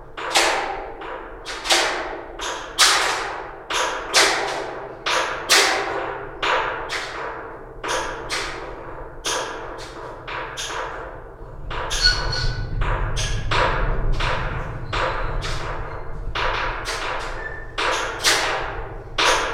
light pole in the park, Torun Poland
recording from inside a large metal light pole
5 April 2011